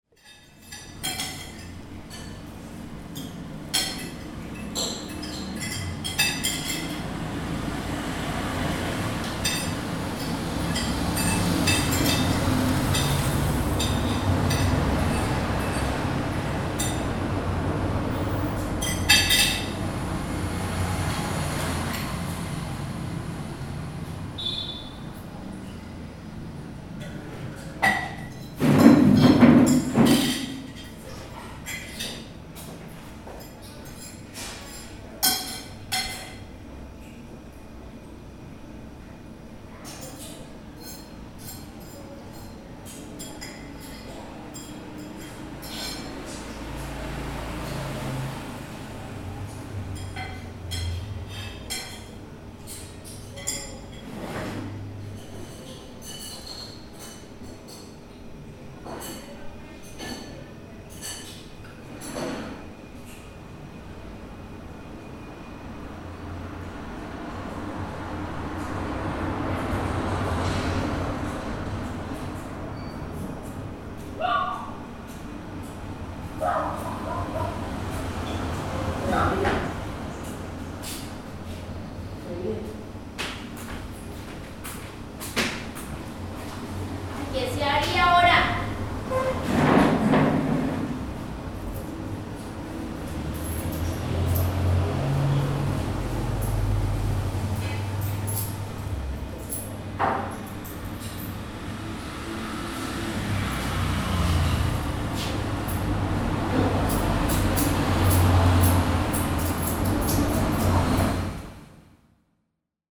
Villavicencio, Meta, Colombia - Hora de Almuerzo en casa de Doña Ángela
La hora de almuerzo en casa de Doña Ángela.